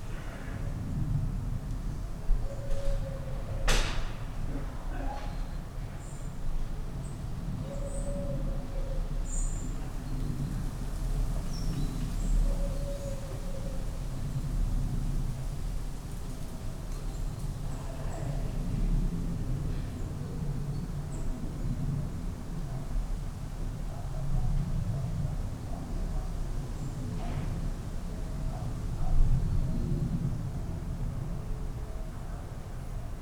Frohnhausen, Essen, Deutschland - backyard ambience
Essen, backyard evening ambience
(Sony PCM D50, DPA4060)